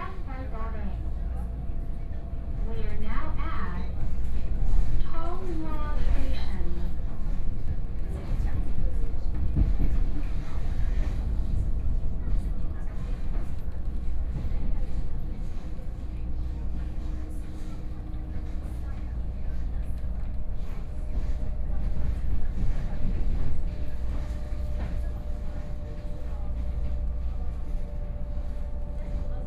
{"title": "Tongluo Township, Miaoli County - Local Train", "date": "2013-10-08 10:15:00", "description": "from Miaoli Station to Tongluo Station, Zoom H4n+ Soundman OKM II", "latitude": "24.51", "longitude": "120.79", "altitude": "142", "timezone": "Asia/Taipei"}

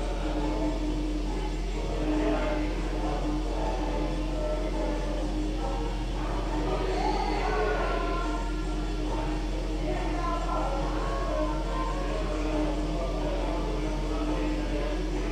{"title": "berlin, reuterstraße: bar - the city, the country & me: wc ventilation of yuma bar", "date": "2010-04-24 02:45:00", "description": "wc ventilation at yuma bar, reggae music wafting through the open wc door\nthe city, the country & me: april 24, 2010", "latitude": "52.49", "longitude": "13.43", "altitude": "42", "timezone": "Europe/Berlin"}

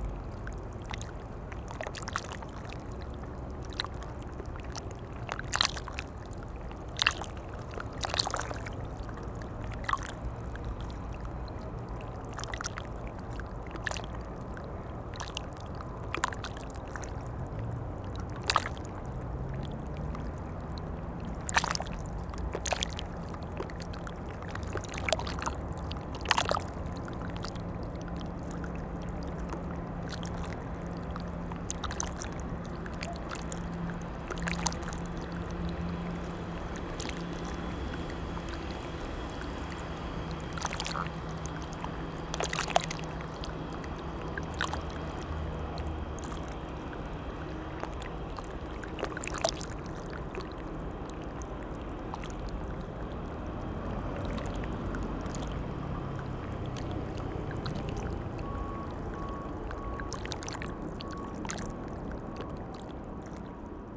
{"title": "Meudon, France - Waves", "date": "2016-09-22 16:00:00", "description": "Soft river Seine flowing near the barges. It's an incredibly quiet place for Paris.", "latitude": "48.82", "longitude": "2.24", "altitude": "32", "timezone": "Europe/Paris"}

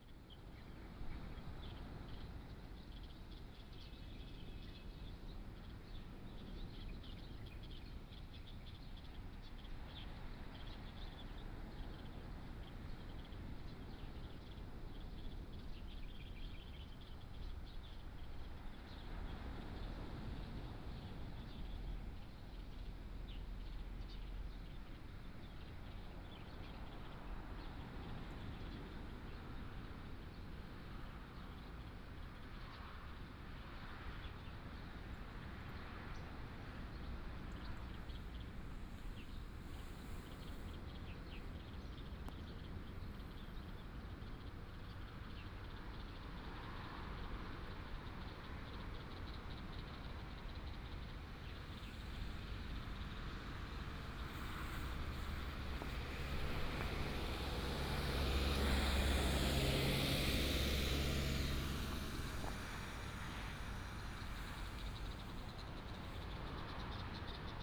{"title": "大埤池產業道路, Shanlin Rd., Dawu Township - Road in the mountains", "date": "2018-04-14 07:24:00", "description": "Road in the mountains, Traffic sound, Sound of the waves, birds sound\nBinaural recordings, Sony PCM D100+ Soundman OKM II", "latitude": "22.36", "longitude": "120.90", "altitude": "29", "timezone": "Asia/Taipei"}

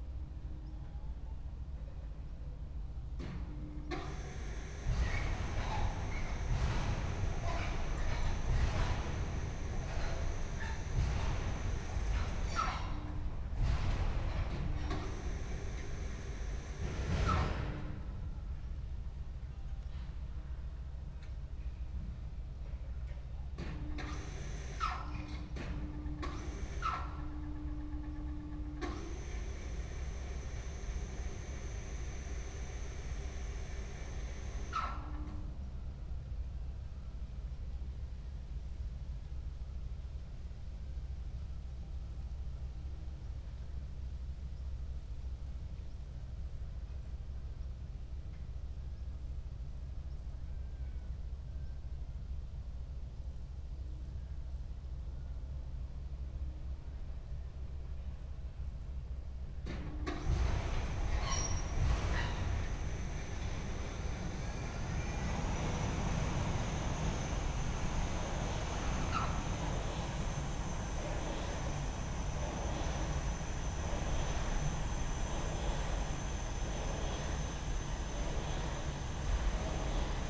{"title": "brandenburg/havel, kirchmöser, bahntechnikerring: track construction company - the city, the country & me: overhead crane", "date": "2014-08-04 16:42:00", "description": "overhead crane moving rails at the outside area of a track construction company\nthe city, the country & me: august 4, 2014", "latitude": "52.39", "longitude": "12.44", "altitude": "28", "timezone": "Europe/Berlin"}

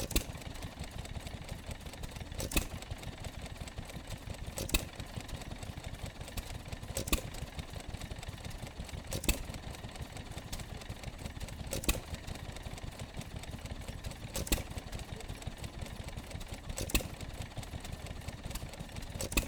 {"title": "Welburn, York, UK - john deere model e 1938 ...", "date": "2022-07-26 12:35:00", "description": "john deere model e 1938 stationary engine ... petrol ... 1 and half hp ... used for water pump ... corn shellers ... milking machine ... washing machine ... sheep shearing ...", "latitude": "54.26", "longitude": "-0.96", "altitude": "47", "timezone": "Europe/London"}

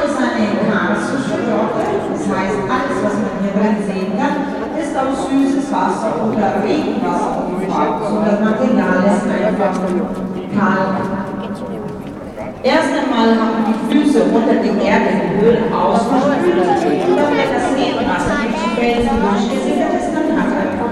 {"title": "Parco Regionale di Porto Conte, Alghero Sassari, Italy - Neptune's Grotto", "date": "2005-07-07 22:05:00", "description": "One afternoon we took the 600 and something steps down the side of a cliff to visit Grotta di Nettuno, a beautiful but crowded cave. I hoped to get some recordings of natural reverb but instead recorded The Cave MC who walked around with a wireless mic and detailed the history.", "latitude": "40.56", "longitude": "8.16", "altitude": "2", "timezone": "Europe/Rome"}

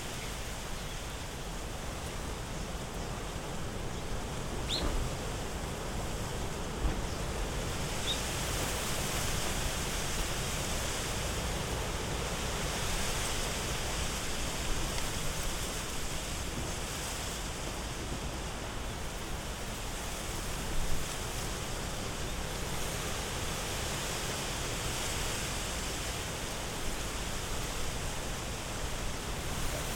{"title": "Hayashi, Ritto, Shiga Prefecture, Japan - Wind in Young Bamboo", "date": "2015-01-01 13:54:00", "description": "Strong wind blowing into young bamboo trees, a few birds, and some human sounds in rural Japan on New Year's Day, 2015.", "latitude": "35.04", "longitude": "136.02", "altitude": "111", "timezone": "Asia/Tokyo"}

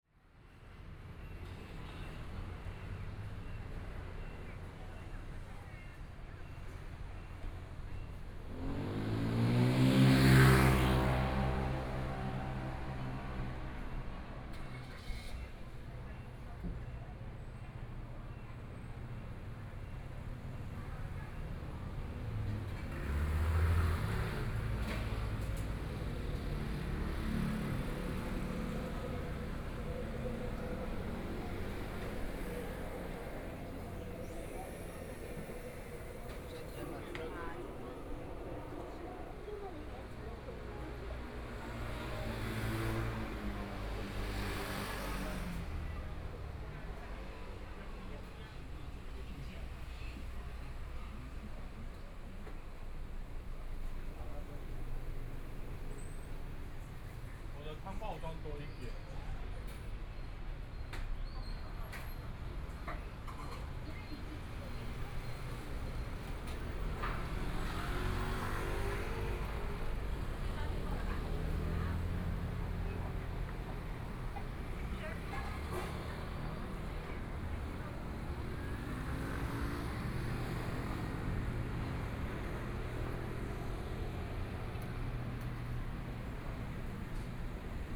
{"title": "Longjiang Rd., Taipei City - Walking across the different streets", "date": "2014-02-17 17:30:00", "description": "Walking across the different streets, Traffic Sound, Sound a variety of shops and restaurants\nPlease turn up the volume\nBinaural recordings, Zoom H4n+ Soundman OKM II", "latitude": "25.06", "longitude": "121.54", "timezone": "Asia/Taipei"}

